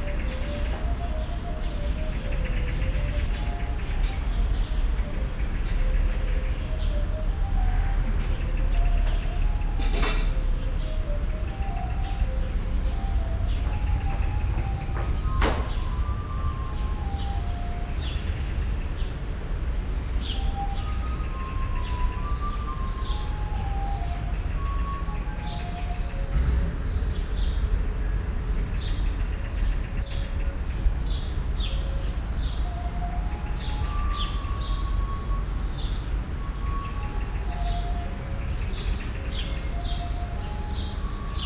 flute excercises, heard on a balcony in a backyard of Bethanien, Berlin.
(iphone 4s, tacam IXJ2, Primo EM172)